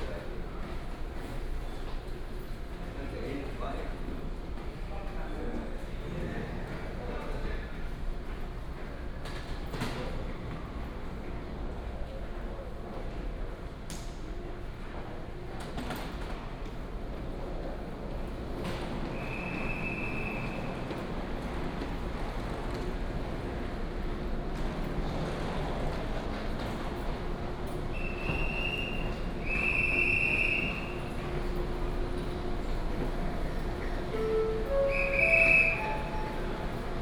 彰化車站, Taiwan - walking in the Station
From the station hall, Through the flyover, To the station platform, Train arrived
Changhua County, Taiwan, 3 March